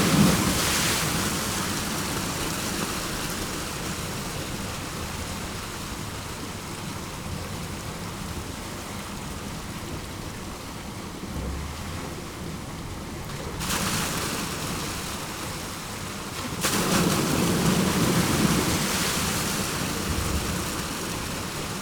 Litvínov, Czech Republic - Kopisty (the outside of the pipes)
Most Basin, North Bohemia
These sounds were recorded in the area of the former village of Kopisty. Kopisty was demolished (in the 70's) to make way for the expanding mines and petrochemical industries. There are many kilometers of pipes in the landscape. There is black liquid tar flowing from these pipes.
13 September 2015, 13:00